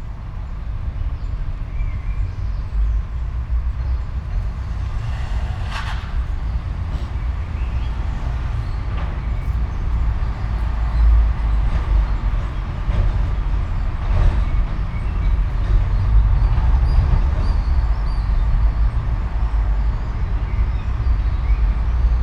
all the mornings of the ... - jun 18 2013 tuesday 07:06